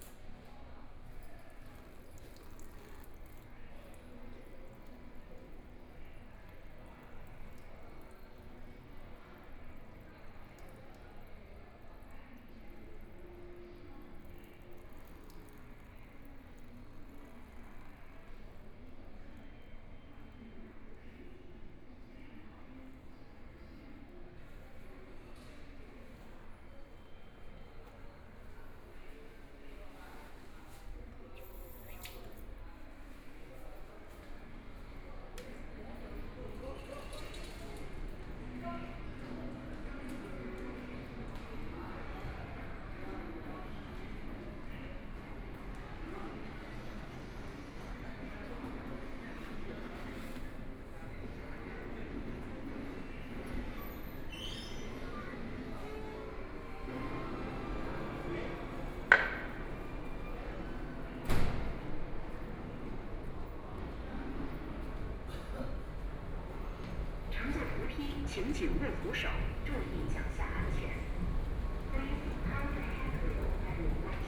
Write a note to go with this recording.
From the station platform to lobby, Escalator noise, Messages broadcast station, Out of the station to the station exit direction, Binaural recording, Zoom H6+ Soundman OKM II